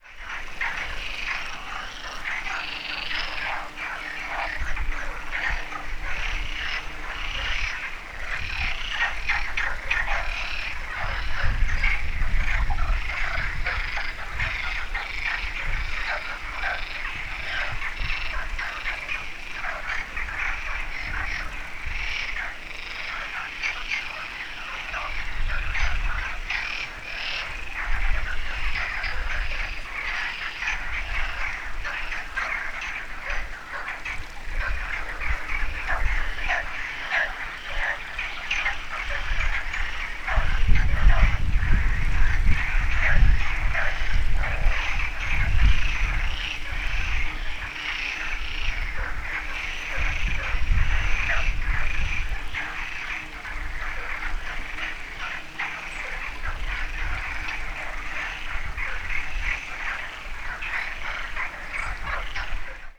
another take on noisy frogs from Madeira.
Portugal, 2 May 2015, 15:38